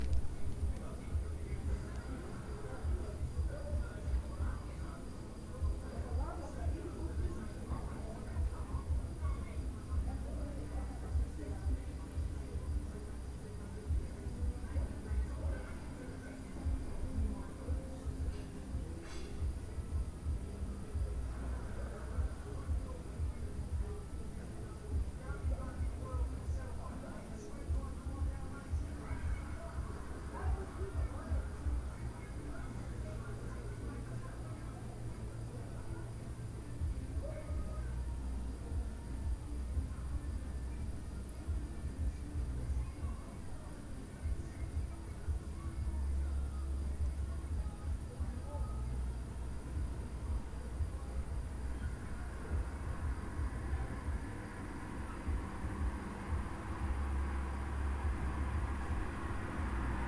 Za tremi ribniki, Maribor, Slovenia - corners for one minute
one minute for this corner: Za tremi ribniki 11
25 August 2012, 20:56